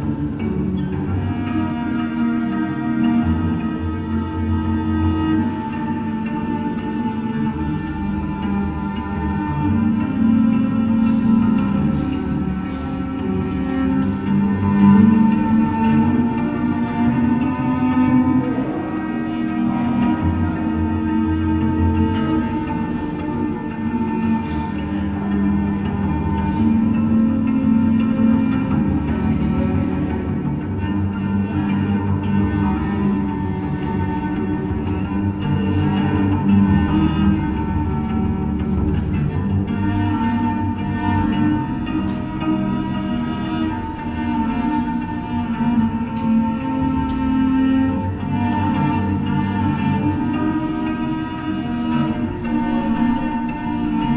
cello player at subway station U8 Schönleinstr., Berlin
Berlin